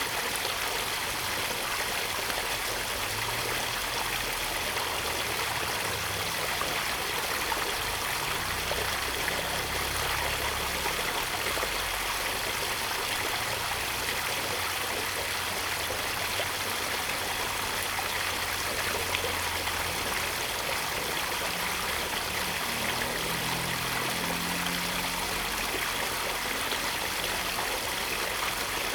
Minzu St., Yuli Township - Drainage channel
Traffic Sound, Drainage channel, Water sound
Zoom H2n MS+XY